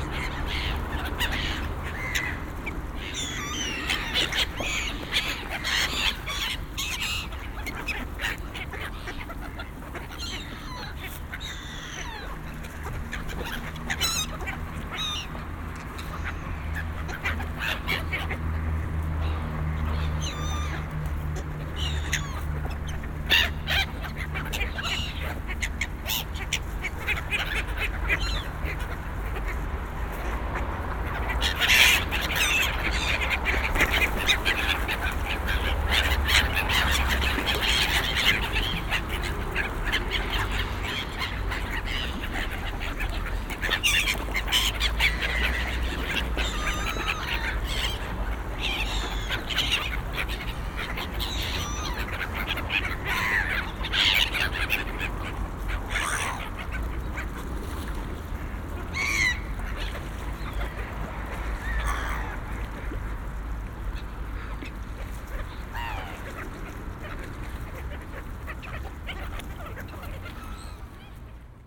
Most Groszowy w Opolu, Opole, Polska - (44) Ducks quacking near the Groszowy Bridge
Ducks quacking near the Groszowy Bridge.
binaural recording with Soundman OKM + Zoom H2n
sound posted by Katarzyna Trzeciak
województwo opolskie, Polska, 13 November 2016